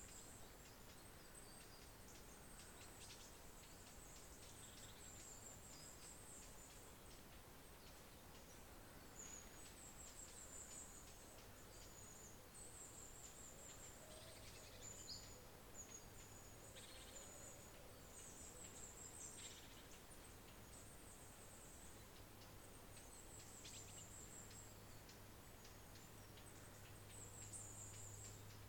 This recording was made using a Zoom H4N, in the woodlands at Embercombe. A fallow deer can be heard 'barking' and moving through the undergrowth. Embercombe is one of the core rewilding sites in Devon Wildland, as well managing the land for nature it is a retreat centre. This recording is part of a series of recordings that will be taken across the landscape, Devon Wildland, to highlight the soundscape that wildlife experience and highlight any potential soundscape barriers that may effect connectivity for wildlife.